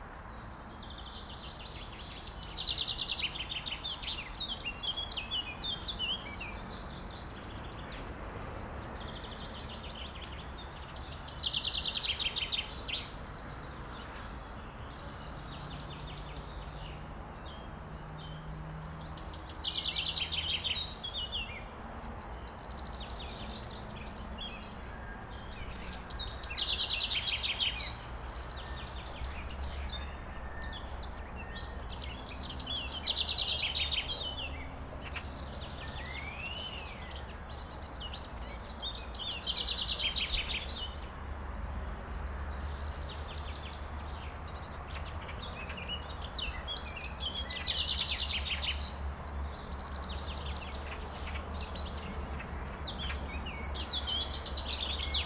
morning chorus with distant highway sounds